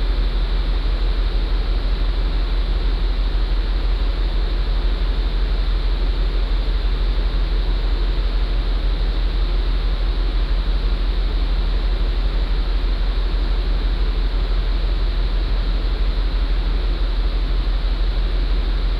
{"title": "南竿發電廠, Nangan Township - In front of the power plant", "date": "2014-10-14 17:41:00", "description": "In front of the power plant", "latitude": "26.15", "longitude": "119.93", "altitude": "92", "timezone": "Asia/Taipei"}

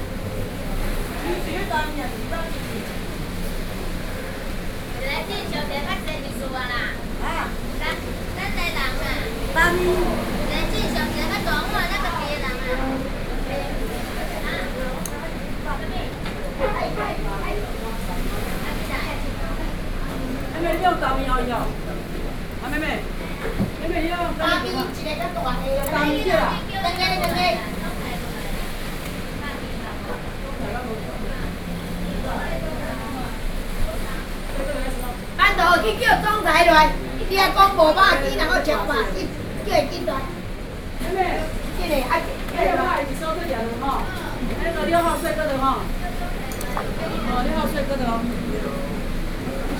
{"title": "Sec., Chongqing N. Rd., Datong Dist., Taipei City - Small restaurant", "date": "2012-11-30 11:25:00", "latitude": "25.05", "longitude": "121.51", "altitude": "11", "timezone": "Asia/Taipei"}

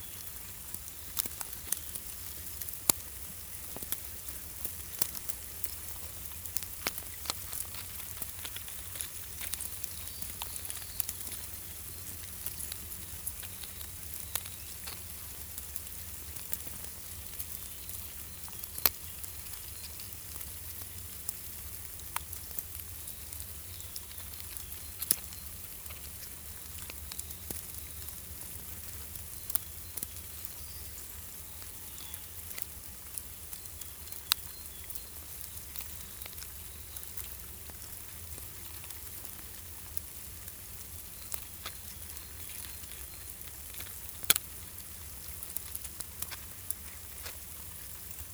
{"title": "Saint-Laurent-du-Pont, France - Ants", "date": "2017-03-30 16:00:00", "description": "A big anthill in the forest. Happy ants are working.", "latitude": "45.39", "longitude": "5.76", "altitude": "1012", "timezone": "Europe/Paris"}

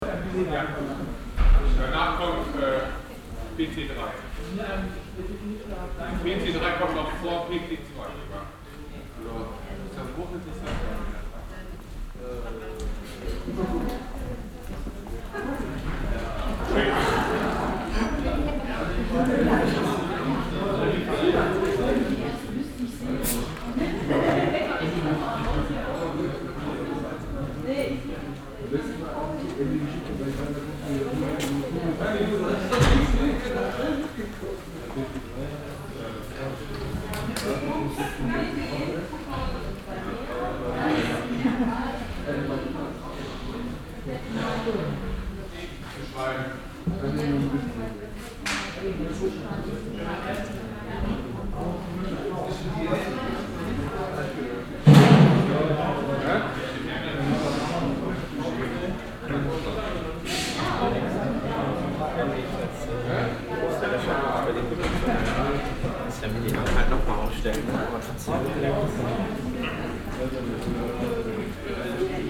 {"title": "Nordviertel, Essen, Deutschland - essen, unversity, auditorium center", "date": "2014-04-09 13:40:00", "description": "Im Hörsaal Zentrum der Universität.\nDer Klang von Studentenstimmen, die im Vorraum der Hörsäle auf die Vorlesungen warten.\nInside the auditorium center of the university.\nProjekt - Stadtklang//: Hörorte - topographic field recordings and social ambiences", "latitude": "51.46", "longitude": "7.01", "altitude": "59", "timezone": "Europe/Berlin"}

